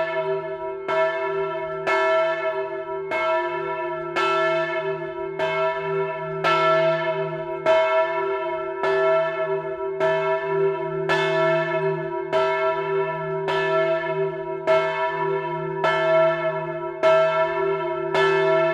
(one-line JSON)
{"title": "Rue de l'Église, Rumegies, France - Rumegies (Nord) - église", "date": "2021-04-29 10:00:00", "description": "Rumegies (Nord)\néglise - la volée automatisée", "latitude": "50.49", "longitude": "3.35", "altitude": "29", "timezone": "Europe/Paris"}